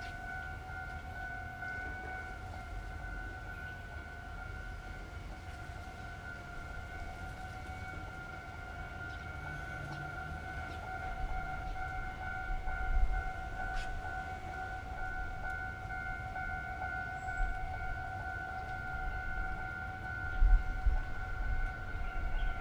Fongshan, Kaohsiung - Side of the tracks
高雄市 (Kaohsiung City), 中華民國, 17 March 2012, 13:31